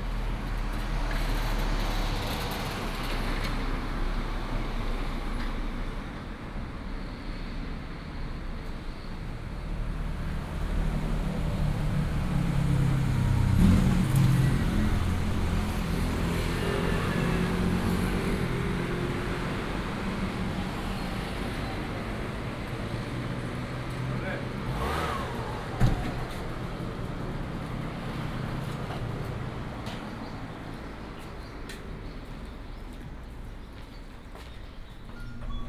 滿州門市, Manzhou Township - In front of the convenience store
In front of the convenience store, Bird sound, In the town center, Traffic sound